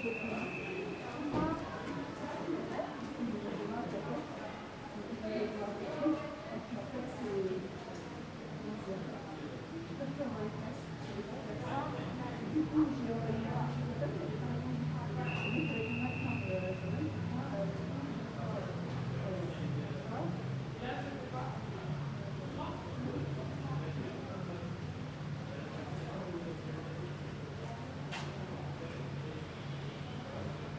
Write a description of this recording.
This is a recording under a porch which surrounded the famous 'Place des Vosges' located in the 3th district in Paris. I used Schoeps MS microphones (CMC5 - MK4 - MK8) and a Sound Devices Mixpre6.